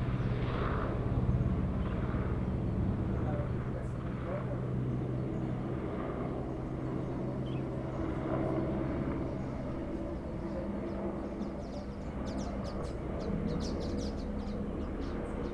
Alsace Corré, Réunion - Le carillon de CILAOS avant le concert des Lycos (cest un sacré groupe!)
Comptage des hélicos entre 08h42 et 10h22 sur ce fichier son, soit 1h40 durée: 4 ULM + 1 autogire + 18 hélico tripale + 2 hélicos bipale, soit 23 survols d'aéronef. Parmi les hélicos tripales, 3 de type EC130B4 (similaire H130) et les autres sont des AS350 probablement "B3" Type "Écureuil": les nuisances aériennes se sont intensifié bouffant désormais la seconde partie de la matinée, bien au delà de 9h30 du matin. En janvier février 2020 ça semble un mauvais souvenir, mais c'est par ce qu'il pleut souvent ou que les chinois ont "le rhûme", on n'a pu que constater une dégradation qui a atteint le sommet en novembre 2019, même si les survols font moins de bruit (un peu de précautions tout de même), c'est l'invasion temporelle (ça n'en finit plus) le problème: on n'entend plus la nature, et le carillon est arrêté depuis 2014)...
Moins